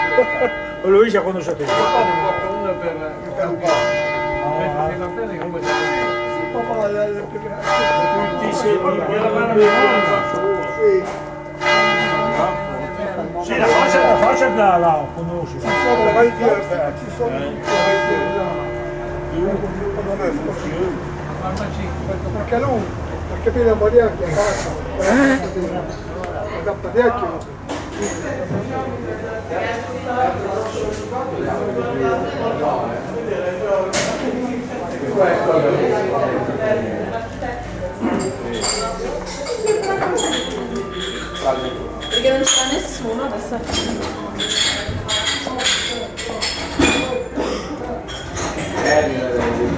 Carpiano (MI), Italy - A coffee at the bar
Taking a coffee at the bar on a Saturday morning. Church bells, old people (i vècc) speaking dialects, guests at the desk.